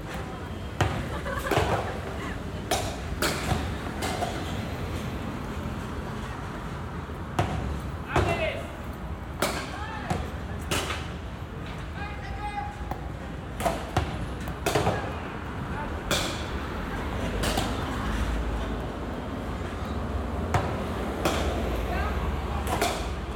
Daimyō, Chuo Ward, Fukuoka, Japan - City Centre Batting Range
Baseball Batting Practice
福岡県, 日本